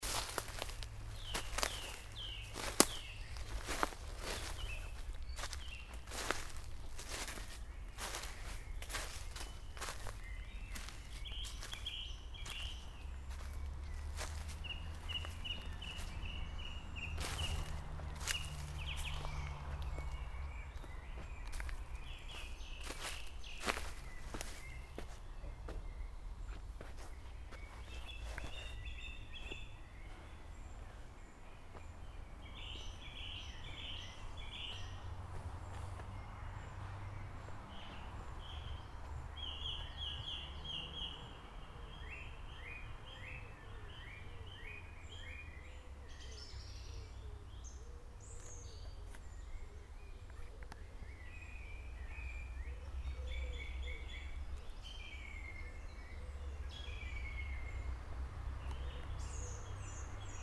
bergisch gladbach, sand, gang durch waldlaub
soundmap: bergisch gladbach/ nrw
kleines waldstück in sand, tiefes laub und lehmboden, dichtes laubblätterdach, zahlreiche vogelstimmen im blattdachecho, nachmittags
project: social ambiences/ listen to the people - in & outdoor nearfield recordings